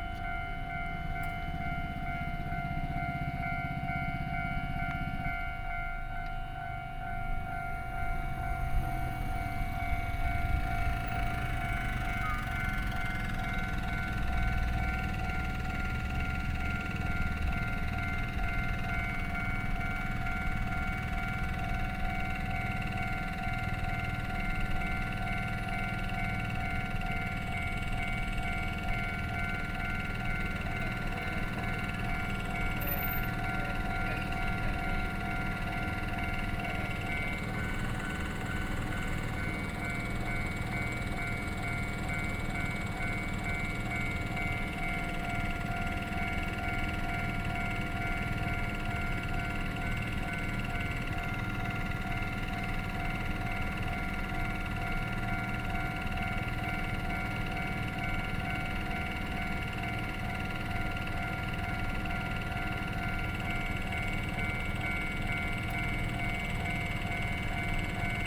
Sec., Zhongxing Rd., 五結鄉四結村 - At railroad crossing
At railroad crossing, Traffic Sound, Trains traveling through
Sony PCM D50+ Soundman OKM II